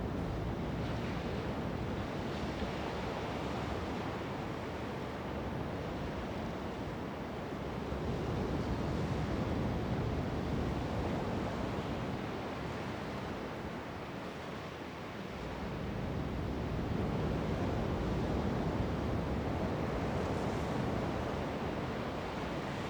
Palaha Cave, Makefu, Niue - Palaha Cave Atmos
14 June 2012